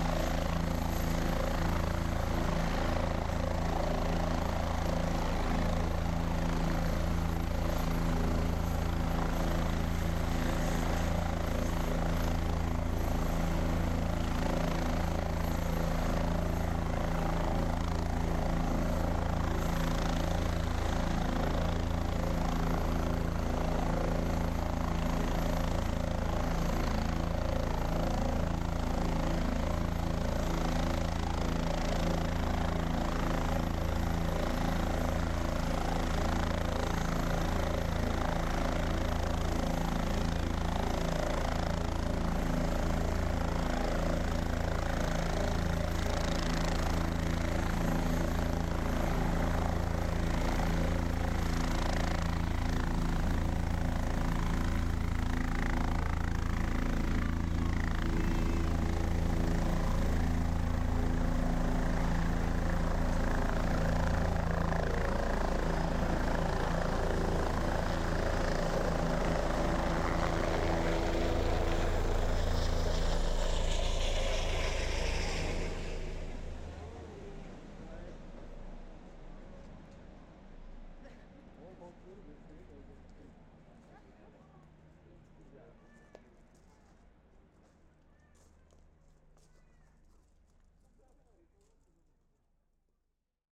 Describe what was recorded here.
helicopter leaving Vasaknos' manor